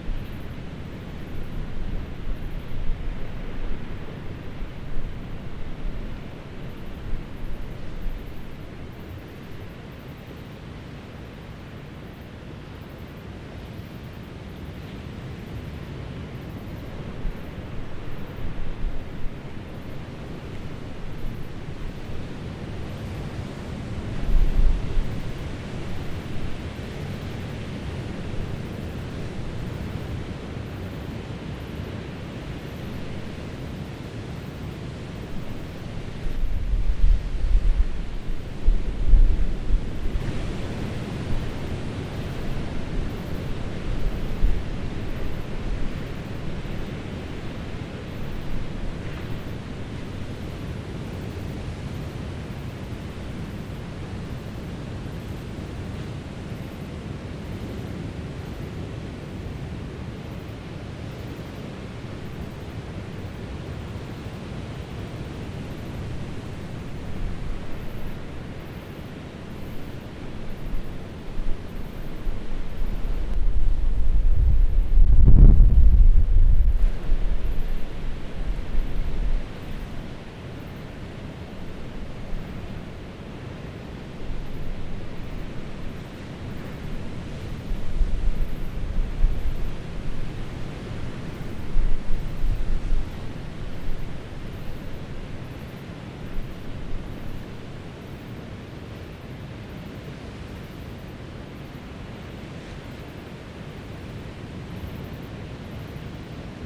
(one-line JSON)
{"title": "Terrazas de Quinquelles, La Ligua, Región de Valparaíso, Chile - sea sound in complete darkness. no moon, millions of stars", "date": "2017-01-01 22:40:00", "description": "I was seating in complete darkness between some Chagual plants (puya chilensis) with a ZOOM H4N recording the sound of the sea waves flushing between big rocks. There were some insects around, maybe some kind of crickets. The view of the milky-way was astonishing.", "latitude": "-32.30", "longitude": "-71.47", "altitude": "11", "timezone": "GMT+1"}